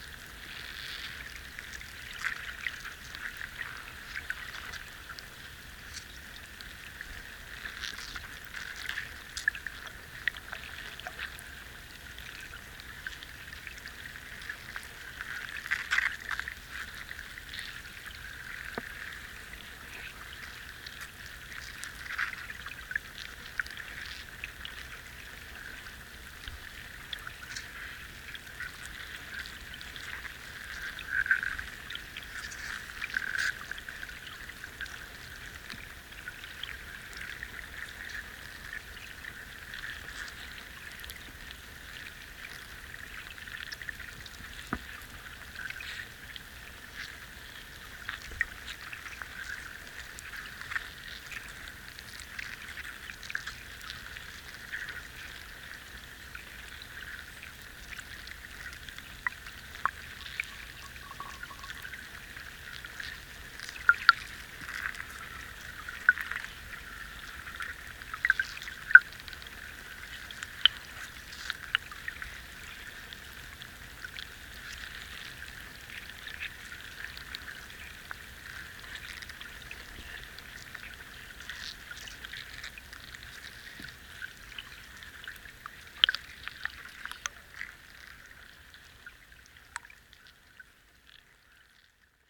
river flow listened through underwater microphones